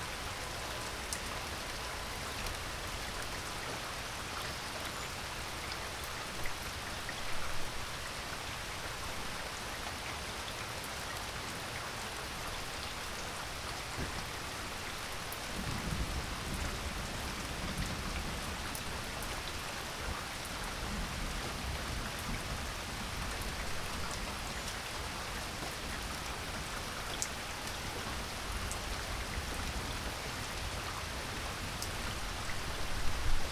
Wind & Tide Playground - Thunderstorm
Nobody will EVER top Michael Oster's "Suburban Thunder" for the absolute best recording of a thunderstorm:
Nevertheless, when a front blew through this morning, it announced itself with a clap of thunder so massive that it shook my house to its foundations and scared the shit out of me. I knew I HAD to try to get a piece of it. It's not in any danger of unseating Oster, but there were some nice rolling tumblers up high in the atmosphere, and on a big stereo the subsonic content is palpable.
Major elements:
* Birds
* Thunder
* Rain hitting the dry gutters
* 55-in. Corinthian Bells wind chimes
* A distant dog
* Distant leaf blowers
* Aircraft
* Cars and a truck
Here's an interesting thing. Another Radio Aporee user, "Cathartech" (AJ Lindner), caught the very same thunderstorm as me:
He says he started his recording at 7:50 a.m., while mine started at 8:45 a.m., some fifty-five minutes later.